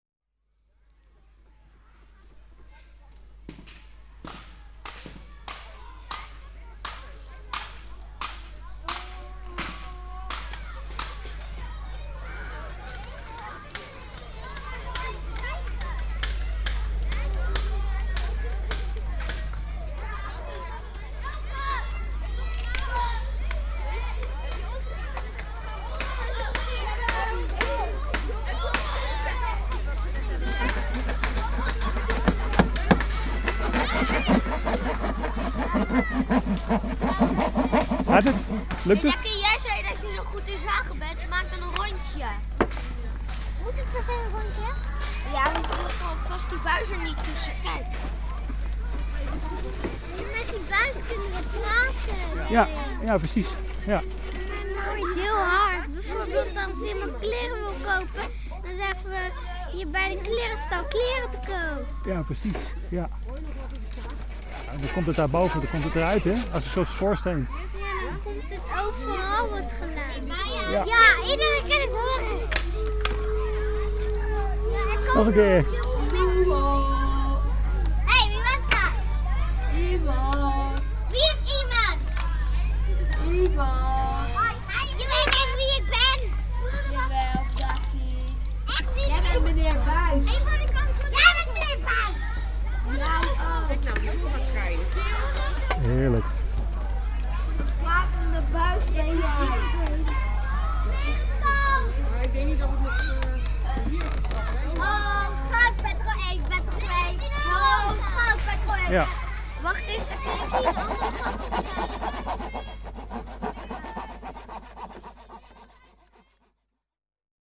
(f)luisterfoon tijdens huttenbouwdag
de (f)luisterfoon tijdens de huttenbouwdag
children building huts and speaking through sounding tubes